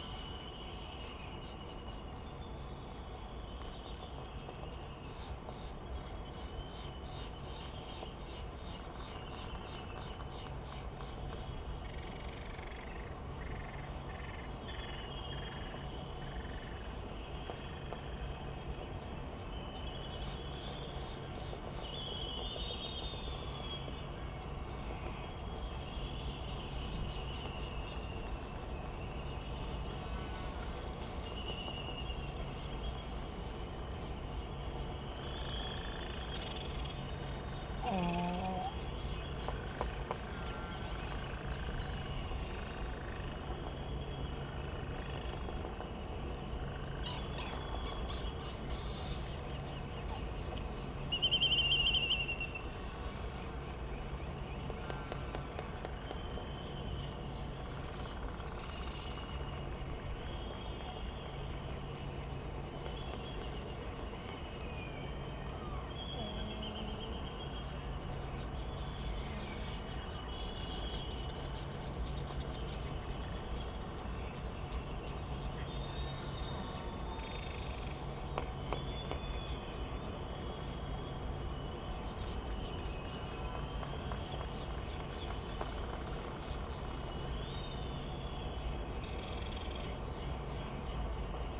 United States Minor Outlying Islands - Laysan albatross soundscape ...
Sand Island ... Midway Atoll ... soundscape ...laysan albatross ... white tern ... black noddy ... bonin petrel ... Sony ECM 959 one point stereo mic to Sony Minidisk ... background noise...